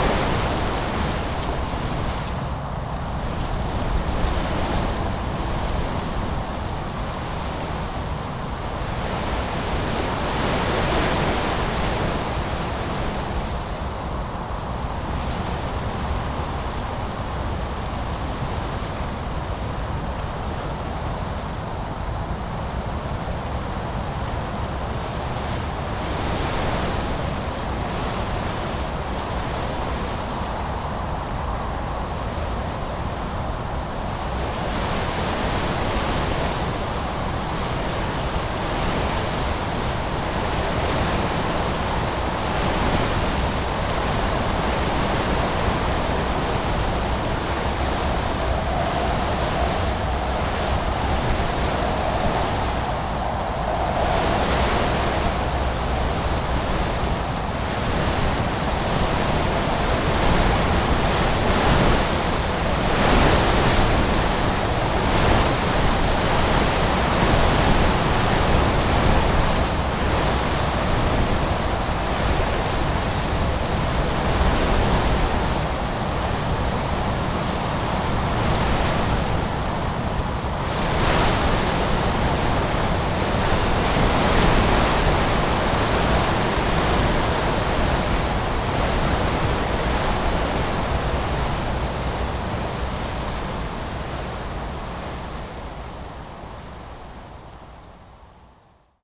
Wavre, Belgique - Wind !!
A terrible terrible wind, blowing into the arbours.
Wavre, Belgium, 12 January 2015, 08:30